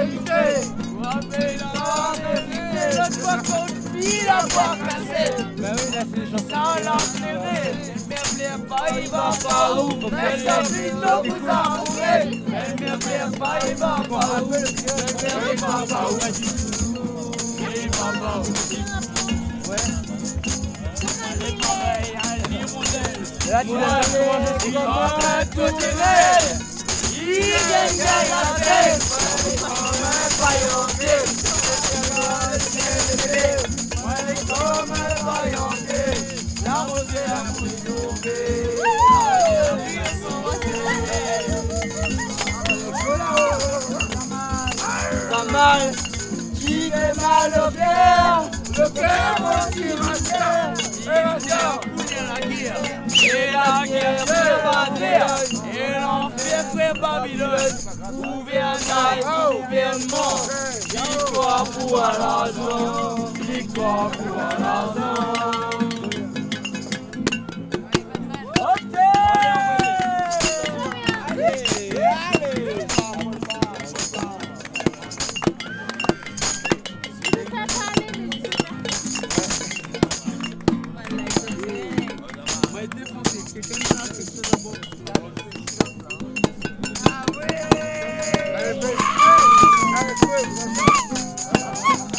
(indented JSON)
{
  "title": "Campfire in Marla, Cirque De Mafat, Réunion - Drunken campfire in Marla",
  "date": "2015-04-05 02:00:00",
  "description": "Field recording using stereo ZOOM H4N. People around a campfire in the early hours of the morning after \"Sound système\" small music festival in the town of Marla. No matter where you go in the world, people still sit around campfires and sing about weed. And play the tambourine badly, too close to the microphone.",
  "latitude": "-21.05",
  "longitude": "55.42",
  "altitude": "966",
  "timezone": "Indian/Reunion"
}